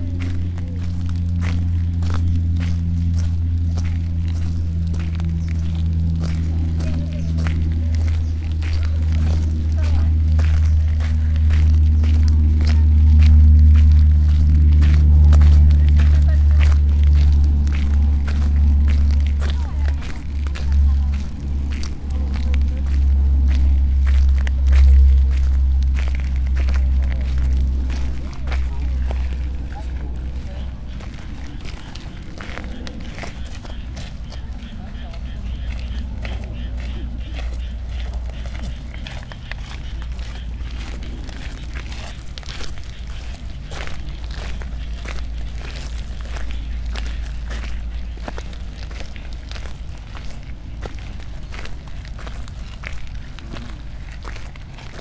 gravel path, steps, birds
last in a walking line
guardian with a cigaret and his discreet impatience
Kyōto-fu, Japan, 1 November 2014, ~16:00